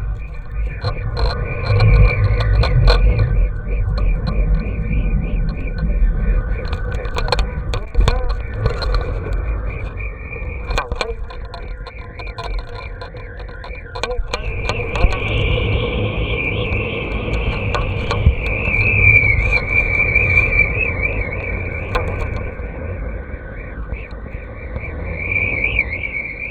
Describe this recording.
A mono recording made with a cheap contact mic atached to the kite string on a blustery day.